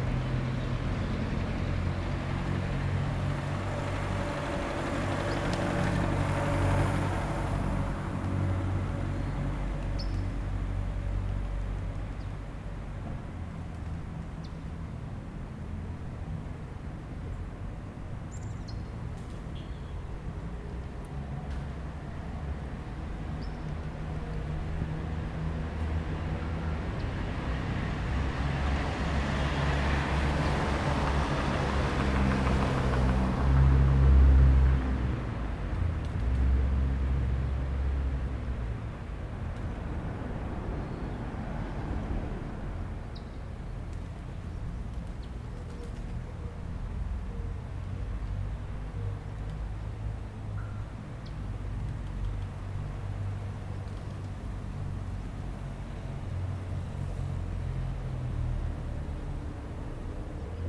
San Martin, Tacna, Peru - No Church bells
Crossing the border between Chile and Peru by night, arriving early in Tacna. Passing my time at the square in front of the church, recording the morning - a city waking up.
7 January 2018, ~06:00